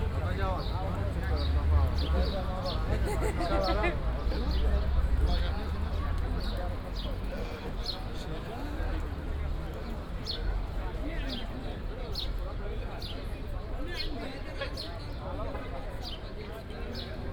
walking over Victoria square. The place is quite populated, mainly by refugees, who used to have their tents here recently. Passing a corner where food is distributed to people, and kids are sitting around drawing pictures on paper.
(Sony PCM D50, OKM2)
Athina, Greece, April 2016